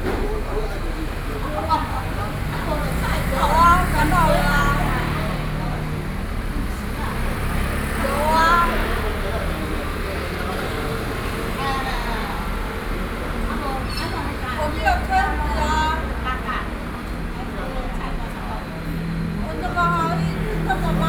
瑞芳區龍興里, New Taipei City - in front of the coffee shop